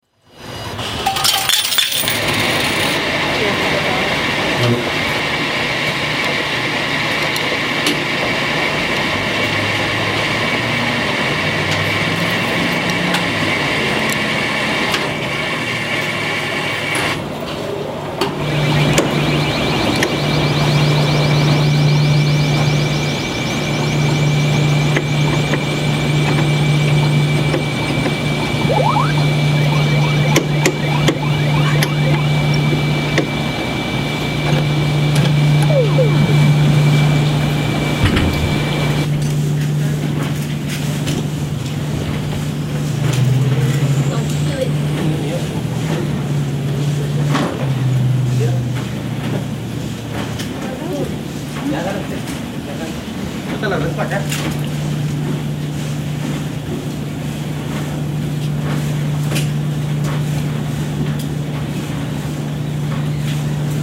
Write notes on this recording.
Sense of Place Audio for Audio Documentary Class